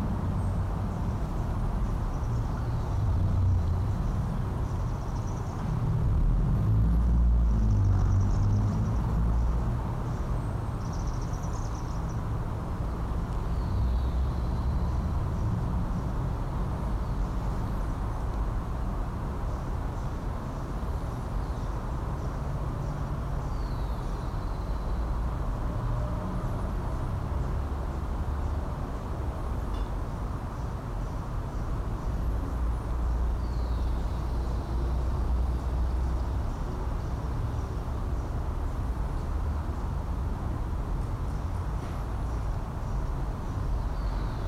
Cra. 8 ## 107 - 41, Bogotá, Colombia - Santa Ana wets
In this audio you will hear many sounds such as the sound of birds, cars passing, a person's footsteps, a dog's footsteps, wind, a person's cleaning dishes in his apartment
Región Andina, Colombia, 23 May 2021, 06:30